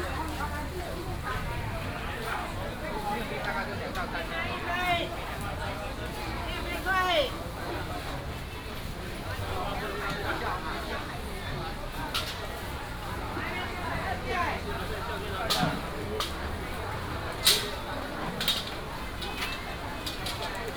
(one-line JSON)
{"title": "Minsheng St., Hukou Township - Outdoor traditional market", "date": "2017-08-26 07:34:00", "description": "Outdoor traditional market, traffic sound, vendors peddling, Binaural recordings, Sony PCM D100+ Soundman OKM II", "latitude": "24.90", "longitude": "121.05", "altitude": "85", "timezone": "Asia/Taipei"}